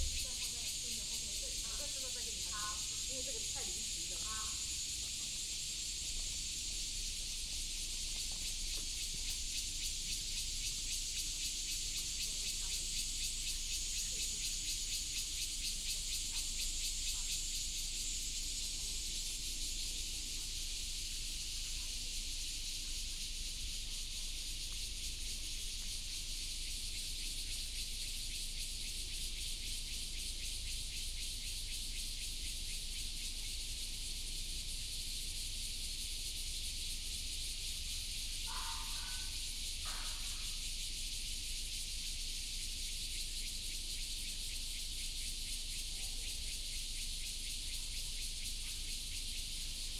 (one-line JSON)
{"title": "齋明寺, Taoyuan City - In the courtyard of the temple", "date": "2017-07-25 08:55:00", "description": "In the courtyard of the temple, Cicada and birds sound", "latitude": "24.89", "longitude": "121.27", "altitude": "185", "timezone": "Asia/Taipei"}